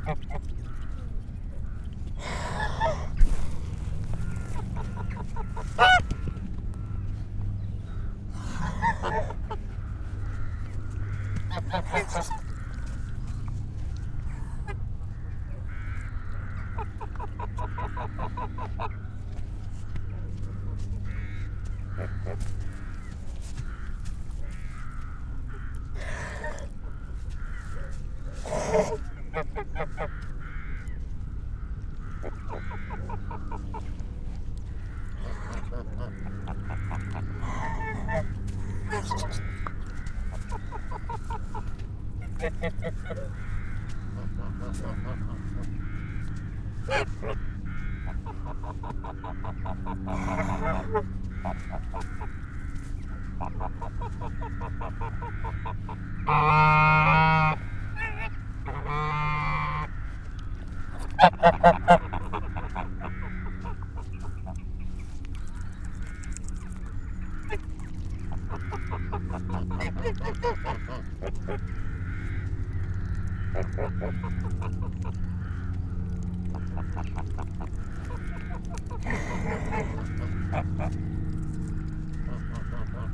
Ducks and a Plane - Golden Valley, Malvern, UK
I am sitting on a bench surrounded by geese and ducks so close they are treading on the mics sometimes. In front of me is a large lake and in the background a half a mile away sits the dark mass of the Malvern Hills. A plane wanders up, probably from Staverton Airfield not too far away. It practices a few manoeuvers and suddenly makes a sharp turn changing the engine note. The geese continue to beg for my lunch.
West Midlands, England, United Kingdom, 4 November 2020, 14:00